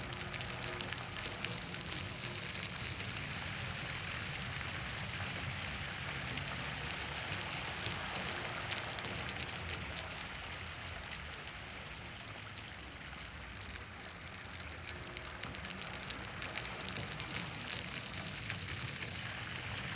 Siemianowice Śląskie, market square, but no market takes place here. Sound of the fountain
(Sony PCM D50)